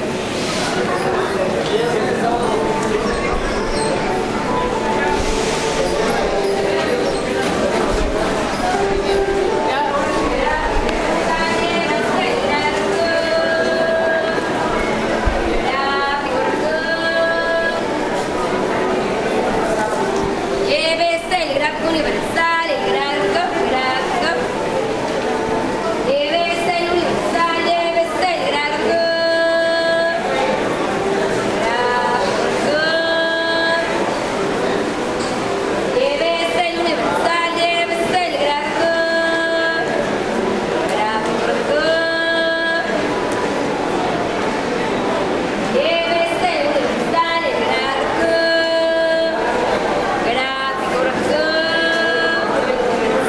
Juárez, Cuauhtémoc, Mexico City, Federal District, Mexico - drink seller
Woman inside the station selling drinks.